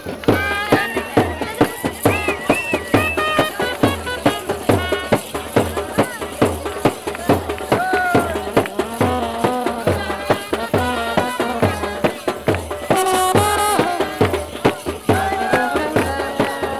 {"title": "Shivala, Varanasi, Uttar Pradesh, Indien - wedding party", "date": "1996-02-26 21:30:00", "description": "met a wedding procession while walking along the ghats at the ganges (recorded with early OKM binaural and a sony dat recorder)", "latitude": "25.29", "longitude": "83.01", "altitude": "60", "timezone": "Asia/Kolkata"}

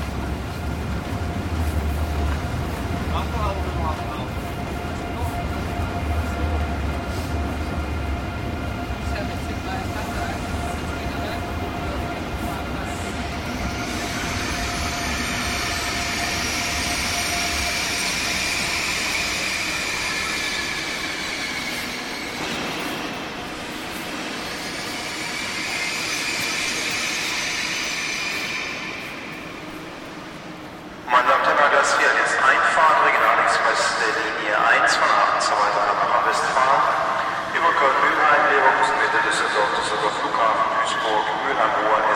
{"title": "Köln Hbf: in der Bahnhofstiefgarage, auf dem Weg zum Gleis - In der Bahnhofstiefgarage, auf dem Weg zum Gleis 4", "date": "2009-10-02 08:43:00", "description": "Cologne Mainstation: in the garage, on the way to platform 4…", "latitude": "50.94", "longitude": "6.96", "altitude": "58", "timezone": "Europe/Berlin"}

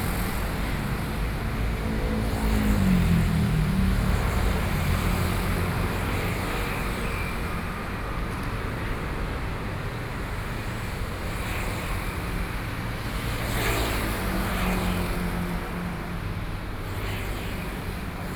{"title": "Taipei, Taiwan - Traffic Noise", "date": "2013-08-10 21:29:00", "description": "Traffic Noise, Standing on the roadside, Aircraft flying through, Sony PCM D50 + Soundman OKM II", "latitude": "25.07", "longitude": "121.52", "altitude": "15", "timezone": "Asia/Taipei"}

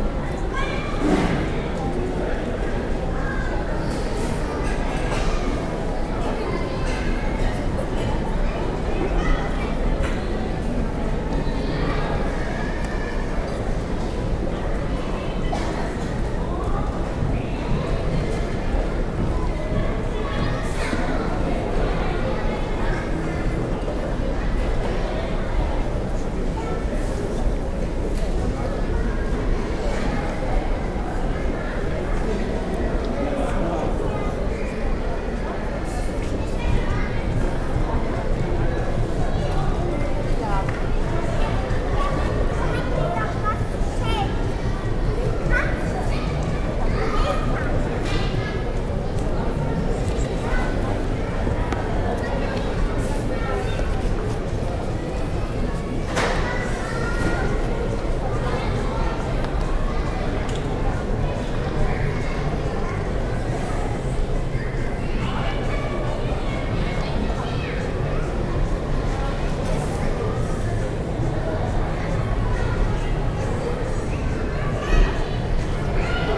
{"title": "frankfurt, airport, terminal 2, departure zone", "date": "2010-07-23 12:53:00", "description": "at frankfurtam main in the airport terminal 2 in the departure zone - restaurant - fast food area\nsoundmap d - social ambiences and topographic field recordings", "latitude": "50.05", "longitude": "8.59", "altitude": "123", "timezone": "Europe/Berlin"}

